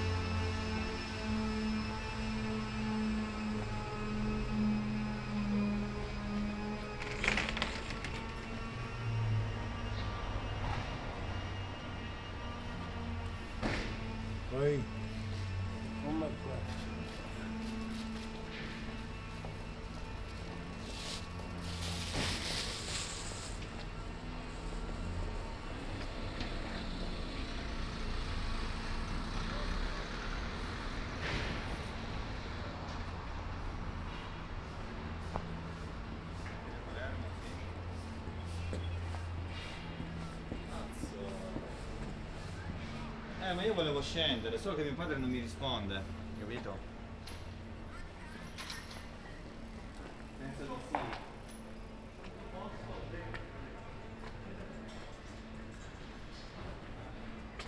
Ascolto il tuo cuore, città. I listen to your heart, city. Several chapters **SCROLL DOWN FOR ALL RECORDINGS** - Passeggiata ai tempi del COVID19
Wednesday March 11 2020. Walking in San Salvario district to Porta Nuova railway station and back;, Turin the afternoon after emergency disposition due to the epidemic of COVID19.
Start at 4:25 p.m. end at 5:01 p.m. duration of recording 36'12''
The entire path is associated with a synchronized GPS track recorded in the (kml, gpx, kmz) files downloadable here:
11 March 2020, 16:25